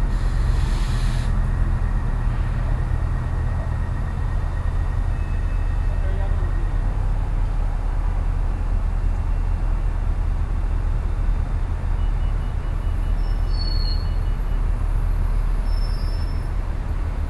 USA, Texas, Austin, Bus Stop, Bus, Crossroad, Road traffic, Binaural